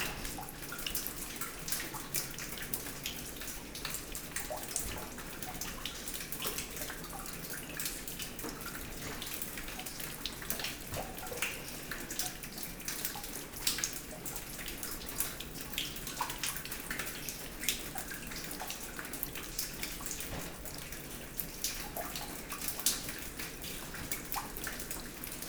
Escherange, France - Molvange schaft
Below the Molvange schaft. It's raining hard inside, we are prepairing ourselves to climb it without clothes, as it's wet.
30 October 2016, 20:00